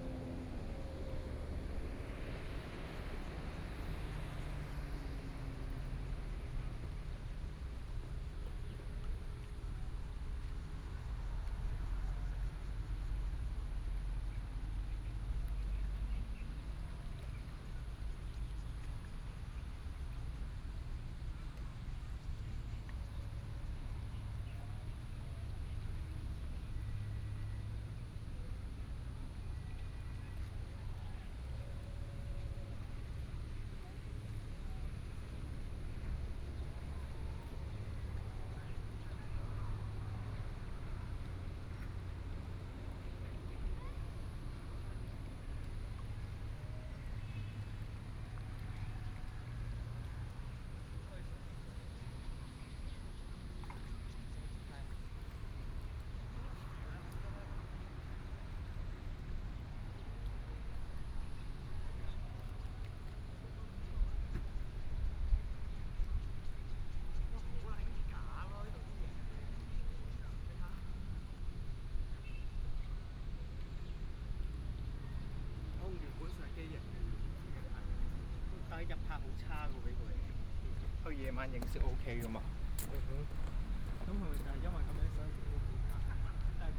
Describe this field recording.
Lake voice, Traffic Sound, Yacht on the lake, Tourists, Very hot days